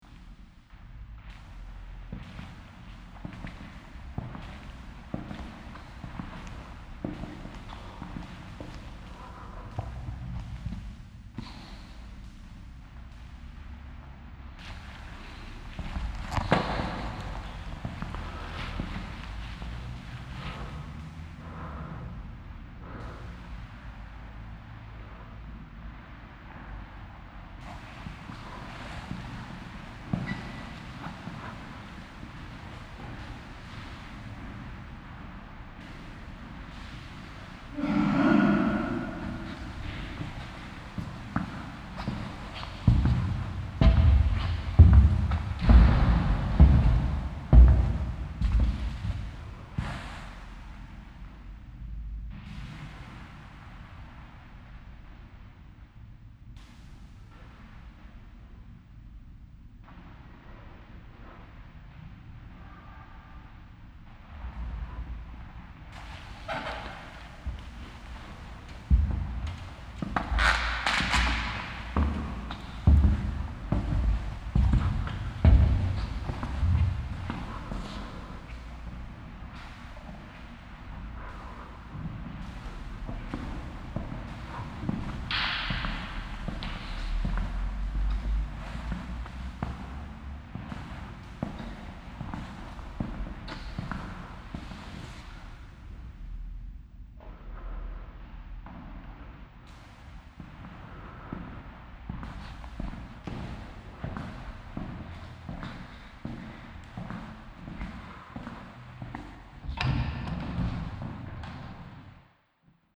Central Area, Cluj-Napoca, Rumänien - Cluj, old catholic church
Inside the oldest church of the town. The sounds of steps, breathes and some coughing reverbing in the silent big hall.
international city scapes - topographic field recordings and social ambiences